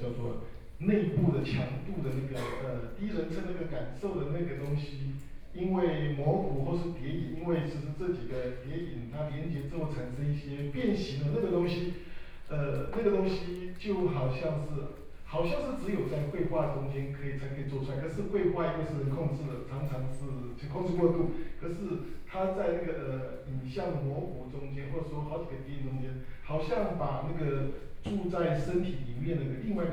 TAIPEI FINE ARTS MUSEUM - artist talk
Ground floor of the museum's library, French photographer Antoine DAgata lectures, Museum curator to ask questions and share, Binaural recordings, Sony PCM D50 + Soundman OKM II
Taipei City, Taiwan, 2 November 2013